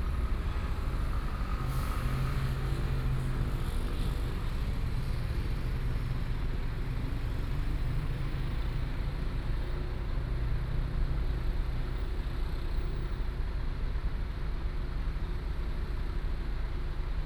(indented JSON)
{
  "title": "Nanbin Rd., Hualien City - Traffic Noise",
  "date": "2013-11-05 13:21:00",
  "description": "Because near the port, Many large trucks traveling through, Binaural recordings, Sony PCM D50+ Soundman OKM II",
  "latitude": "23.97",
  "longitude": "121.61",
  "altitude": "8",
  "timezone": "Asia/Taipei"
}